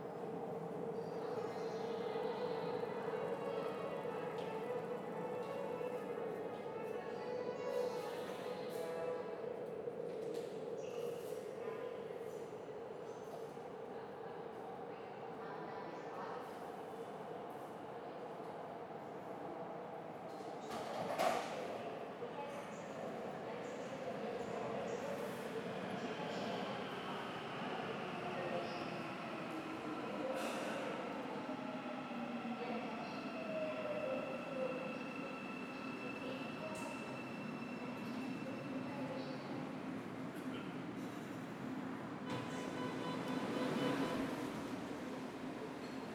대한민국 서울특별시 양재동 시민의숲.양재꽃시장 - Yangjae Citizens Forest Station
Yangjae Citizens Forest Station, Subway Shinbundang line
양재시민의숲역 신분당선
서초구, 서울, 대한민국